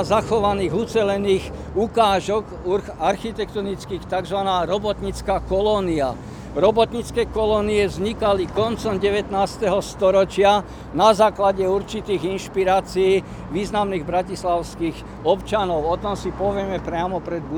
Unedited recording of a talk about local neighbourhood.